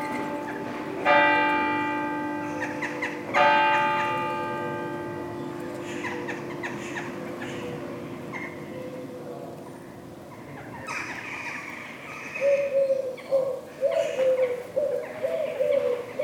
In the center of Saint-Martin-De-Ré, near the church.
From 0:00 mn to 2:30 mn, strictly nothing is happening and it's so peaceful (and also important to record it, even if there's nothing).
2:30 mn : bells are ringing nine.
After this, birds are excited. You can hear : Jackdaws, Common Wood Pigeons, European Turtle Doves, Common Swifts.
5:20 mn : bells are ringing again.
Beautiful and so so quiet.